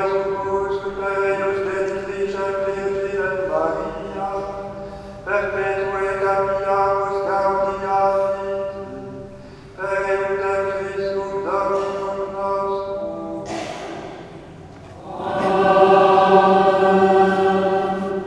Inside the church - a priest prayer at the Mother Gods Procession day.
Project - Klangraum Our - topographic field recordings, sound objects and social ambiences